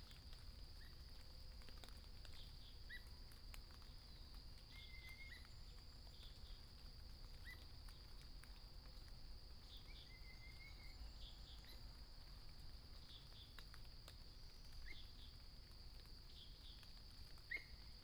Bird sounds, In the woods Sound of water droplets